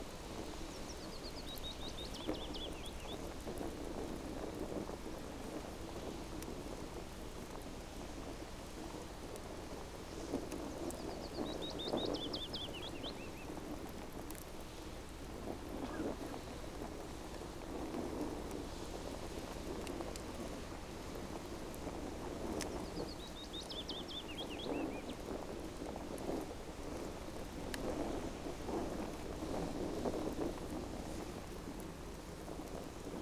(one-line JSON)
{"title": "Pispanletto, Oulu, Finland - Campfire on a windy day", "date": "2020-06-14 17:41:00", "description": "Warm and windy day, sitting by a campfire. Zoom H5, default X/Y module.", "latitude": "65.06", "longitude": "25.39", "timezone": "Europe/Helsinki"}